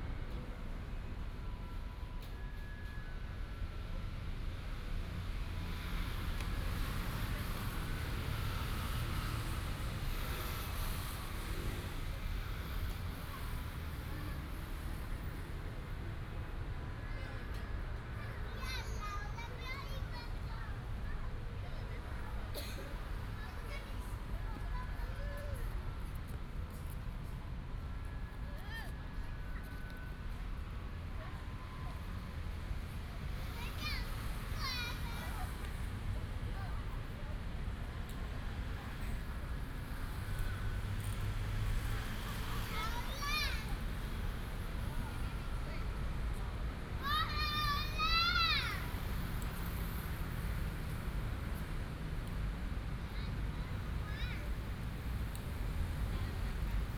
南昌公園, Taipei City - in the Park
in the Park, Child, Children's play area, Traffic sound